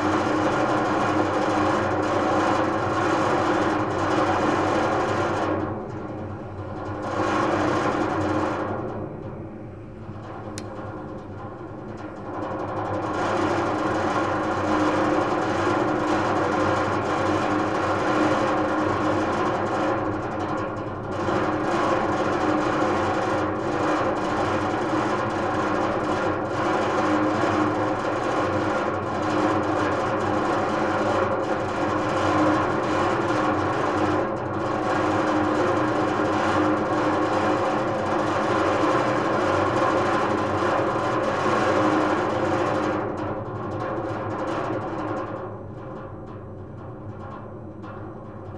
Bd Pierre-Paul Riquet, Toulouse, France - metalic vibration 01
ventilation system metalic vibration
Capatation : ZOOMh4n + AKG C411PP